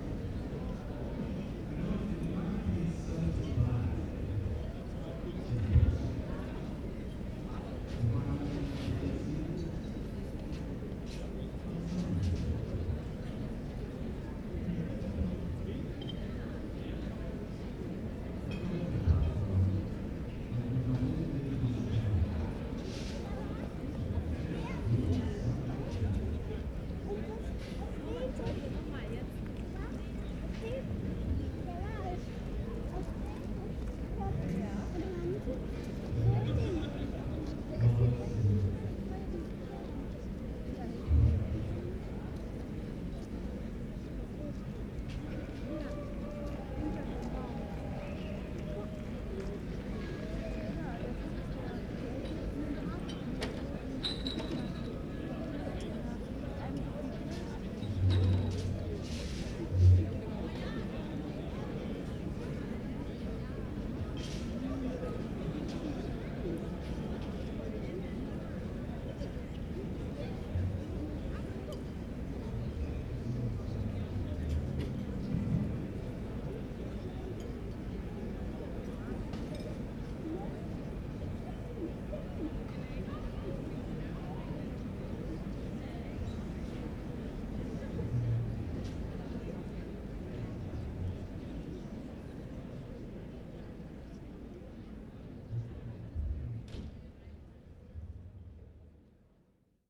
berlin, john-foster-dulles-allee: haus der kulturen der welt, garten - the city, the country & me: garden of house of the cultures of the world
in the garden during a concert of giant sand at wassermusik festival
the city, the country & me: august 5, 2011
Berlin, Germany, 5 August, 21:24